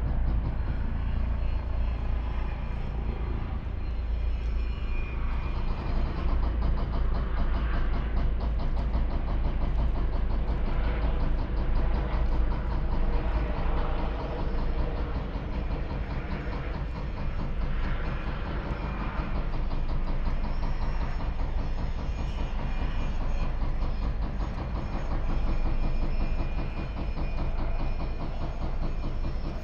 riverside Spree, helicopter and heavy deconstruction work is going on opposite at the cement factory, on a late autumn day
(Sony PCM D50, AOM5024)